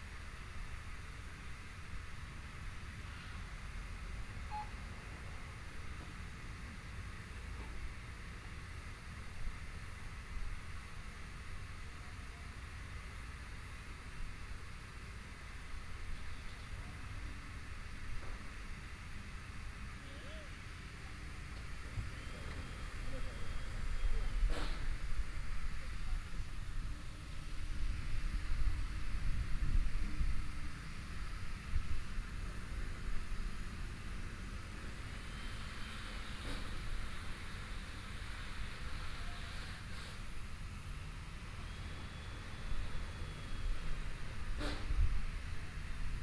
Nanjing Rd., Taitung - Construction noise
Construction noise, Binaural recordings, Zoom H4n+ Soundman OKM II ( SoundMap2014016 -20)